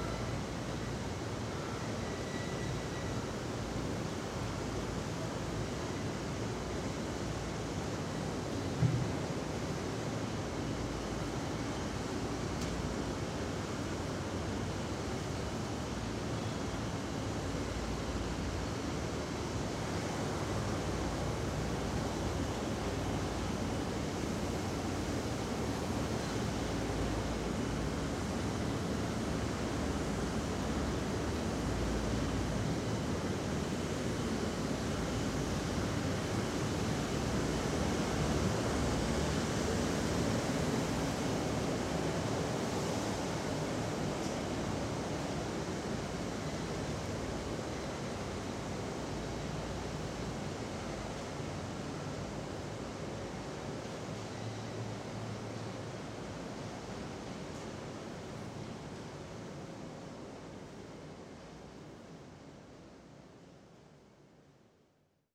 Cervecería Quilmes - Caminata por la Fábrica 1
Caminando por la fábrica de cerveza Quilmes (1).
19 October, 15:00